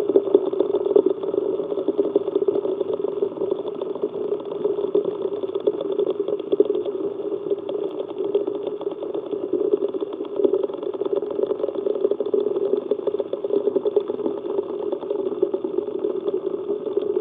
Ottignies-Louvain-la-Neuve, Belgium - Having fun while cooking eggs
While I was cooking eggs at home, I had fun when I put a contact microphone on the pan. Dancing eggs beginning at 2:45 mn !
12 May 2018, 19:00